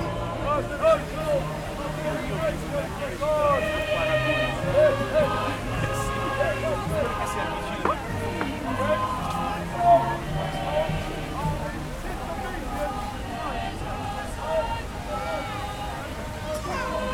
London, Great Britain. - Christmas in Trafalgar Square 2012-Crashed by a man with a different opinion.
Christmas in Trafalgar Square, London 2012. The whole ceremony crashed by a man with a different opinion about the celebration going on, shouting out his messages to the crowd. First a civilian and the salvation army followed him around the square then a police women on a horse. In the end a police car came and he gave up.
Recorded with a Zoom H4n.